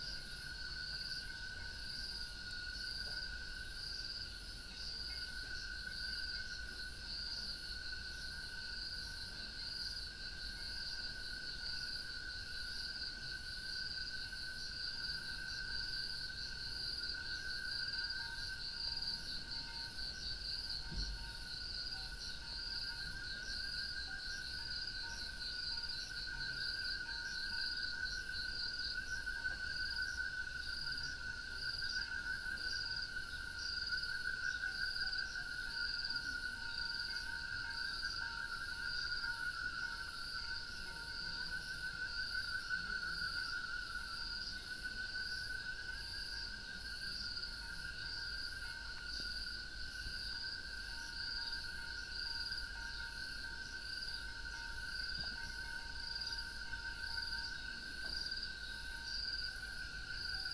Harmony farm, Choma, Zambia - night sounds in summer
sounds at night in the summer months...